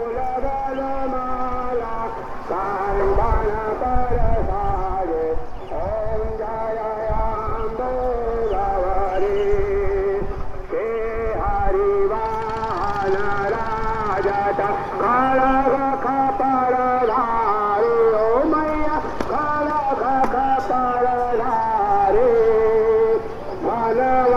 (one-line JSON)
{"title": "Omkareshwar, Madhya Pradesh, Inde - Morning atmosphere", "date": "2015-10-16 11:45:00", "description": "From a rooftop: people, praises and life", "latitude": "22.24", "longitude": "76.15", "altitude": "184", "timezone": "Asia/Kolkata"}